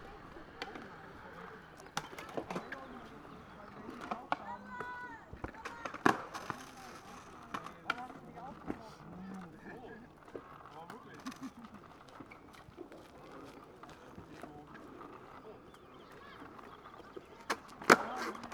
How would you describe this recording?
Berlin Buch, the former derelict and abandoned skater park has been renovated. Though fenced because of Corona lockdown, many young people are practising on this Sunday afternoon in spring. (Sony PCM D50)